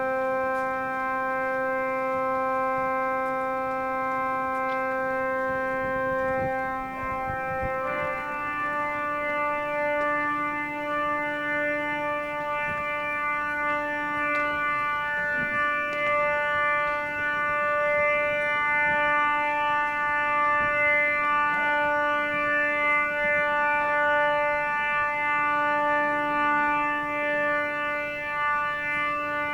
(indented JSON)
{
  "title": "Ghent, Belgium - Sint-Baafs organ tuning Tuesday 30 June 2015",
  "date": "2015-06-30 13:32:00",
  "description": "walking into St. Baafskathedraal while the big organ is being tuned. listening to small snippets of conversation in various languages. then walking out.",
  "latitude": "51.05",
  "longitude": "3.73",
  "altitude": "17",
  "timezone": "Europe/Brussels"
}